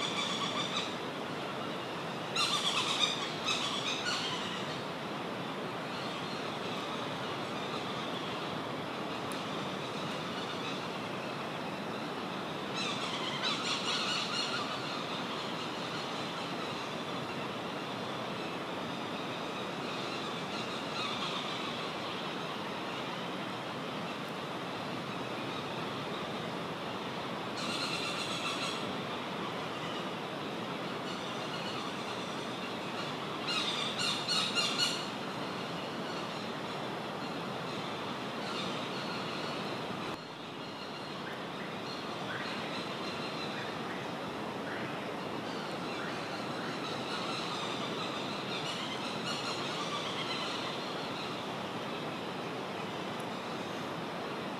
Little Island, Lord Howe Island - Providence Petrels
Mating season for the Providence Petrels on Mount Gower and Mount Lidgbird